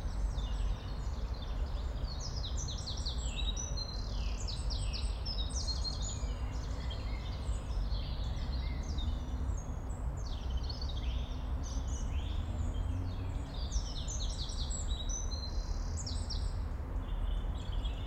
Av. Gabriel Fauré, Forest, Belgique - Parc Duden end of the day
About this place, I heard it was part of "Forêt de soignes" in the past (a big forest located in the south/south east of brussels). With time this parc became an enclave but offers an refuge for human and non-human. We are located higer than the rest of the city that we can have a good visual and sonique perception of it. We are surrounded by big old beech, and overhang a bowl, the rare leaves are found on small trees below, I'm asking me what will become this acoustic later.
31 March 2022, 20:16